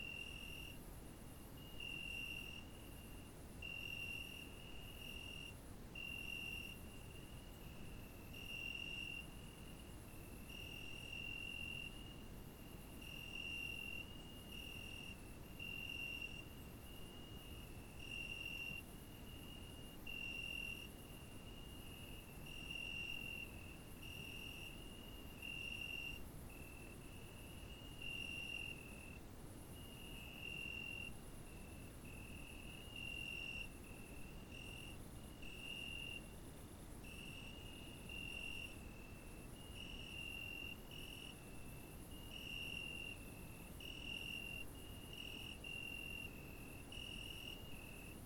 La Roque-sur-Cèze, France - gri
grillons vignes grenouilles tente nuit rivière
3 August 2013